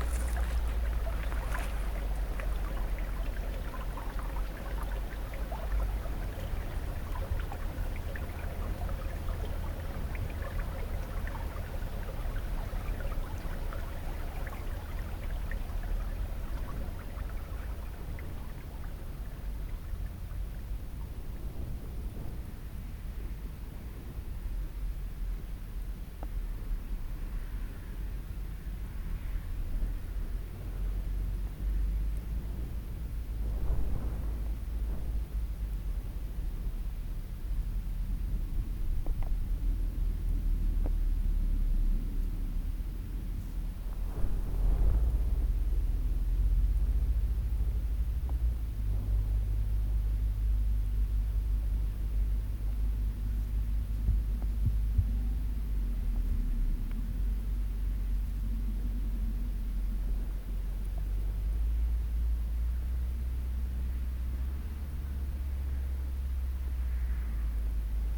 Crowcombe, Taunton, UK - A walk through Somerton Combe to Black Hill
A lovely day in the Quantocks walking from Dead Woman's Ditch to Higher Hare Knap and down through Somerton Combe and back up towards Black Hill. A few ossicle shots of sound as we walked together and mixed into a Quantock Composition using an Olympus LS 14 with onboard mics